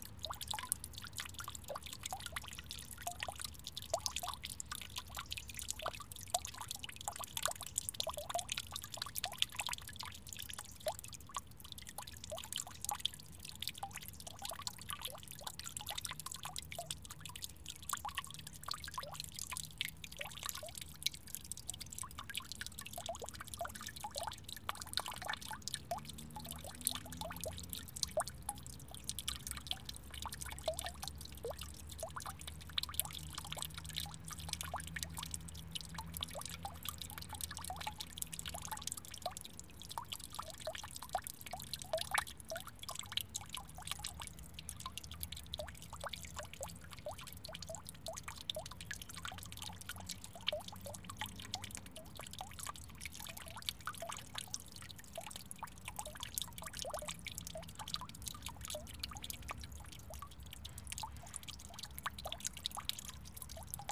Slow trickle of the fountain on a cold morning, watching two men doing Tai Chi exercises in the sun.
Zoom H4n